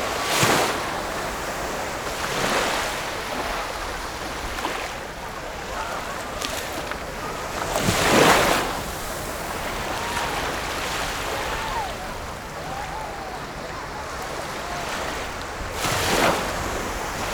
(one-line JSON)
{"title": "Shimen, New Taipei City - Summer beach", "date": "2012-06-25 13:03:00", "latitude": "25.28", "longitude": "121.52", "timezone": "Asia/Taipei"}